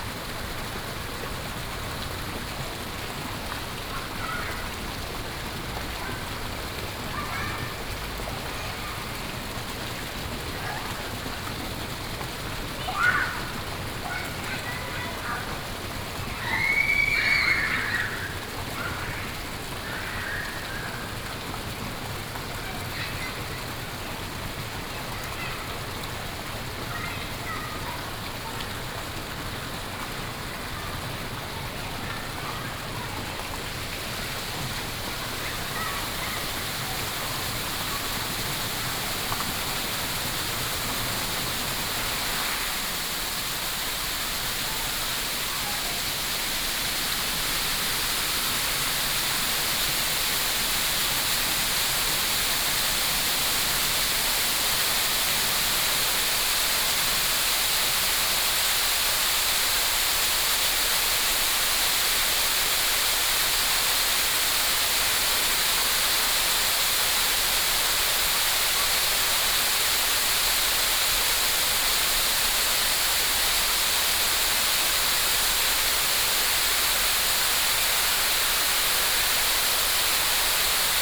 Daan Park Station, Da’an Dist., Taipei City - Fountain
Fountain
Binaural recordings
Sony PCM D100+ Soundman OKM II